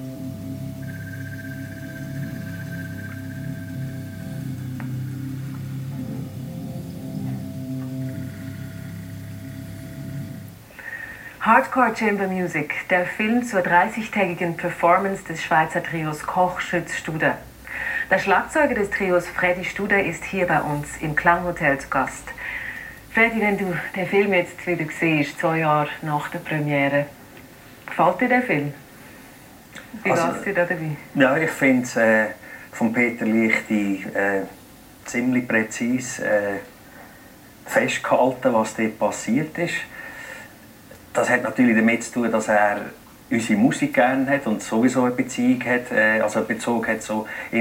St. Gallen (CH), morning traffic - St. Gallen (CH), radisson hotel, tv
tv music magazine "klanghotel" about Koch/Schütz/Studer, interview with drummer Fredy Studer. Recorded in the hotel room, june 16, 2008. - project: "hasenbrot - a private sound diary"
Saint Gallen, Switzerland